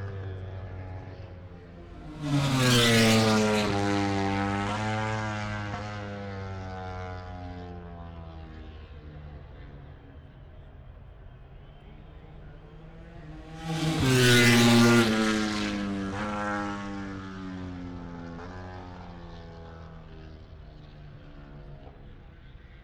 Silverstone Circuit, Towcester, UK - british motorcycle grand prix ... 2021
moto grand prix free practice four ... wellington straight ... dpa 4060s to MixPre3 ...